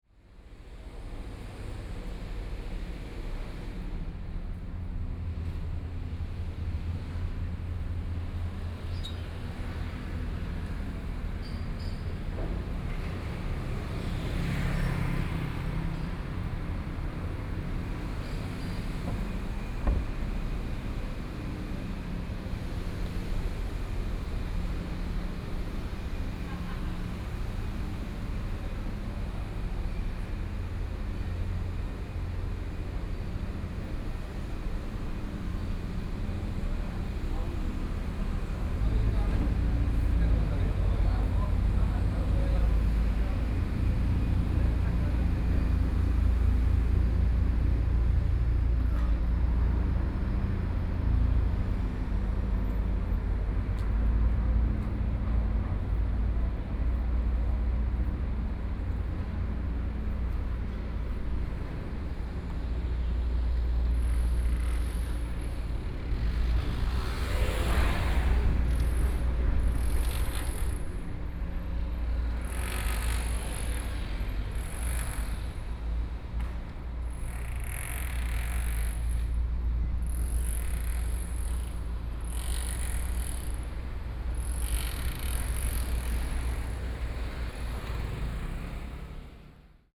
Gong'an St., Xiaogang Dist. - walking in the Street
walking in the Street, Traffic Sound, Sound from construction